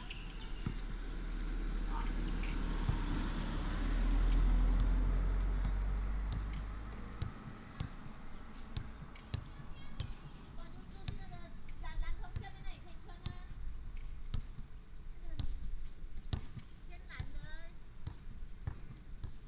文林國中文隆分部, Tongluo Township - Small village
Outside the school, traffic sound, play basketball, Small village, Binaural recordings, Sony PCM D100+ Soundman OKM II
Miaoli County, Tongluo Township, 24 September 2017, 15:20